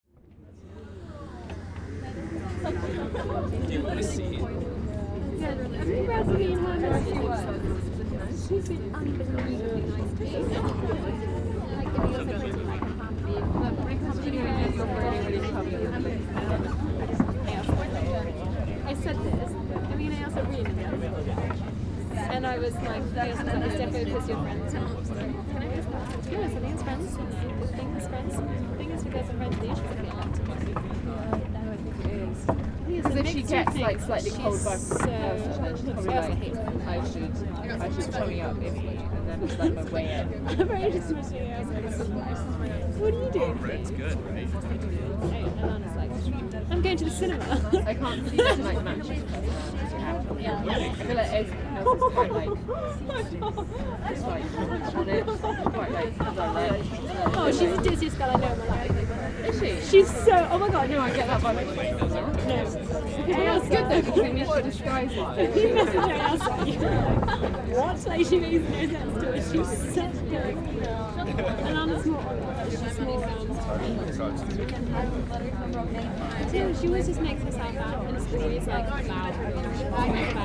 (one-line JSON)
{"title": "Castello, Venezia, Italie - People Arsenale", "date": "2015-10-22 17:56:00", "description": "People at the Biennale Arsenale bar, Zoom H6", "latitude": "45.44", "longitude": "12.36", "altitude": "1", "timezone": "Europe/Rome"}